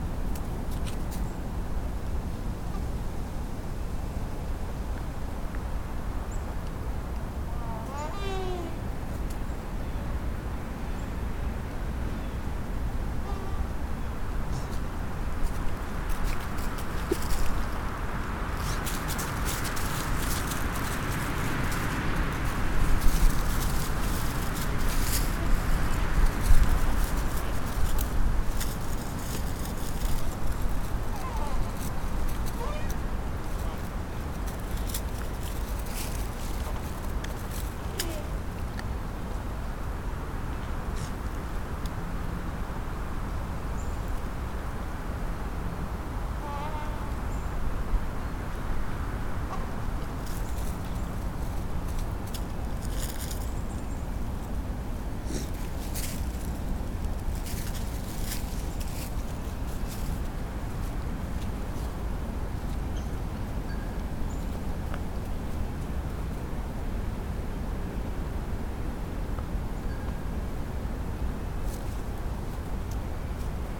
George Washington Hwy, Clayville, RI, USA - Singing tree in a windstorm
A singing tree in heavy wind. You can also hear Rhoda the puppy sniffling around, a small bell or fence from a nearby house in this otherwise very quiet nature area. It was moments before a heavy rainstorm passed through the area, very windy and you can hear some small raindrops before the deluge. Recorded with Olympus LS-10 and LOM mikroUši
Rhode Island, United States